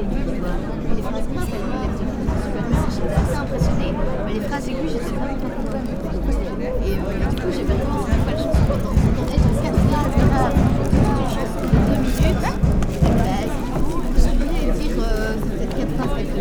Ottignies-Louvain-la-Neuve, Belgique - Place des Sciences
Students enjoy the sun, on a nice square.